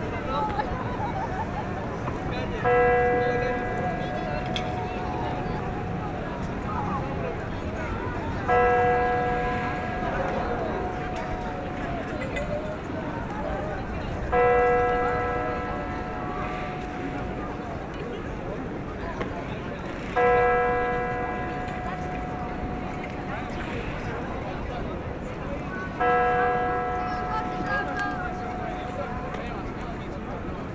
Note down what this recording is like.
Into a main commercial street of Amsterdam, people playing an harmonium machine. A person seeing I'm recording is trying to destroy the sound waving his thingy, out of spice. The recording is damaged but I thought it was important to talk about it. It's relative to Amsterdam overtourism.